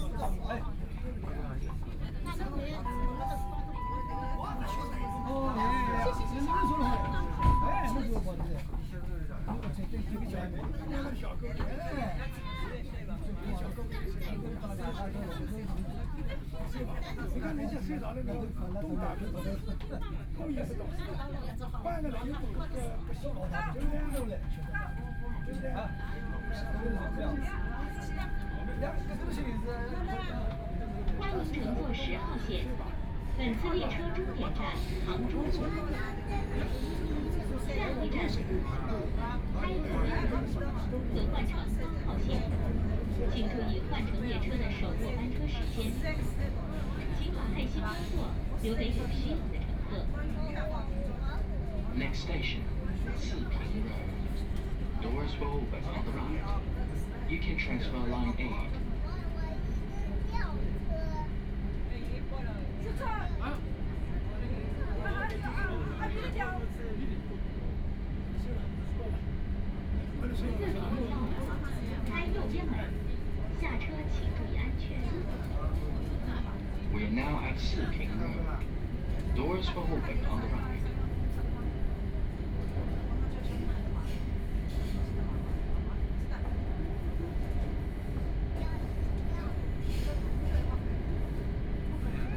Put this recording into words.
The elderly and children, from Wujiaochang station to Siping Road station, Binaural recording, Zoom H6+ Soundman OKM II